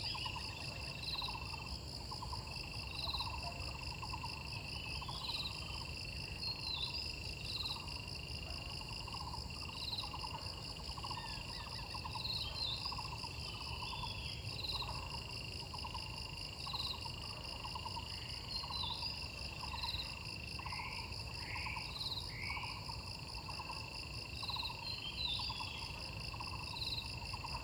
中路坑桃米里, Puli Township - Sound of insects and birds
Birds called, Sound of insects
Zoom H2n MS+XY
Nantou County, Puli Township, 手作步道, 6 May 2016